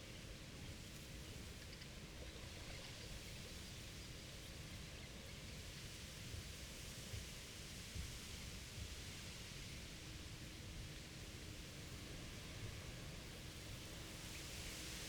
workum: lieuwe klazes leane - the city, the country & me: wind-blown reed
wind-blown reed, young coots and other birds, windturbine in the distance
the city, the country & me: june 22, 2015
22 June 2015, 13:49